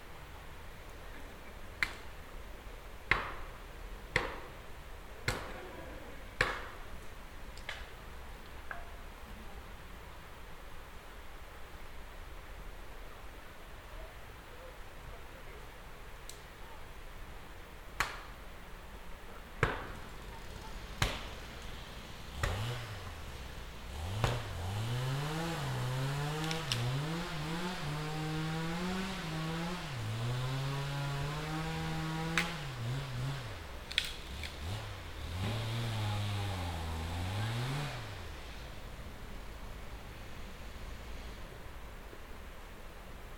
grube louise, cutting wood in the forest